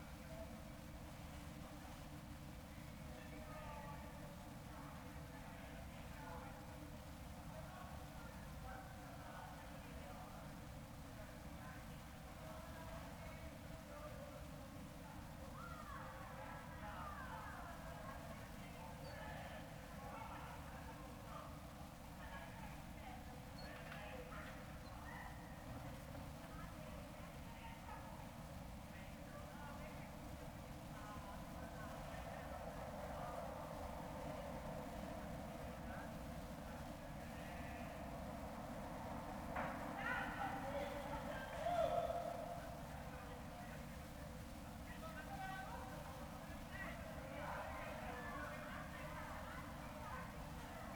"Round midnight March 25 2020" Soundscape
Chapter XXII of Ascolto il tuo cuore, città, I listen to your heart, city
Wednesday March 25th - Thursday 26nd 2020. Fixed position on an internal terrace at San Salvario district Turin, fifteen days after emergency disposition due to the epidemic of COVID19. Same position as previous recording.
Start at 11:35 p.m. end at 00:21 a.m. duration of recording 45'36''.
25 March 2020, 23:35